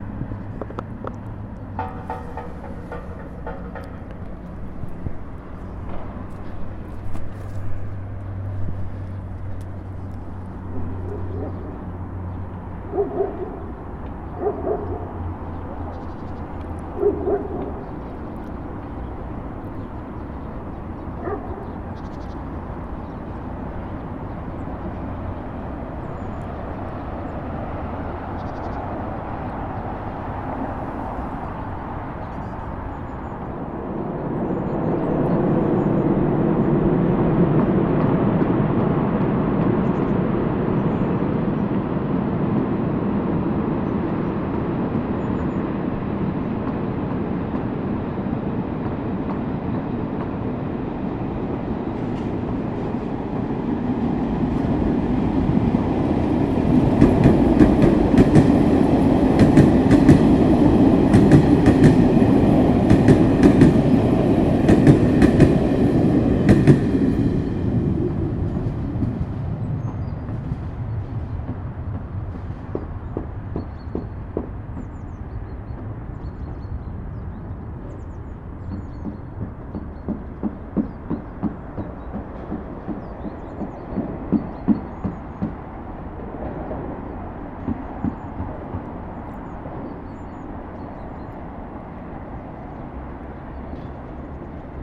soundscape from the hill Hajek, streets Nad Kotlaskou, 23 December 2009
Prague, Czech Republic